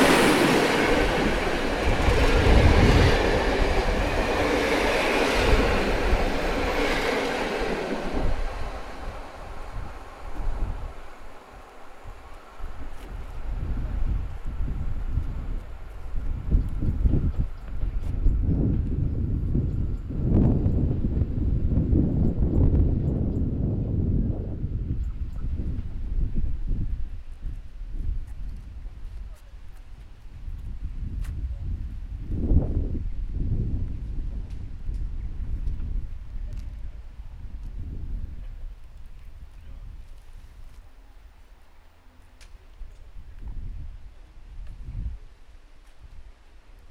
Wawrzyniaka, Gorzów Wielkopolski, Polska - SU42 train passing by
SU42 train after modernization passing by old railway station. The recording comes from a sound walk around the Zawarcie district. Sound captured with ZOOM H1.